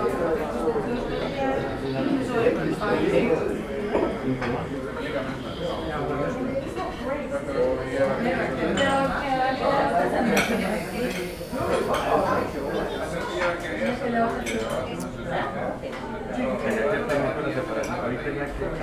neoscenes: Kaffihús Vesturbæjar
Tea and chocolate cake for $17, hmm, tourism has indeed fucked Iceland up these days! Waiting for Palli to show up for our first f2f convo in the 20 years of knowing each other! Networking!